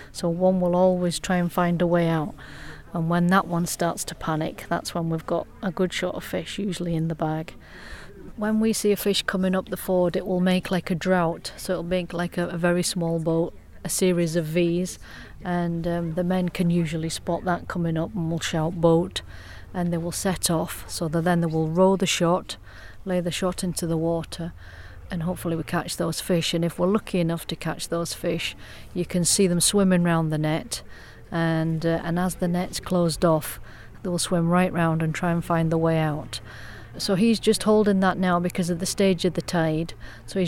Field interview on the banks of the River Tweed with net fisher Joanne Purvis of Paxton netting station. Jo describes the sights and sounds of traditional net fishing, against a background of river activity.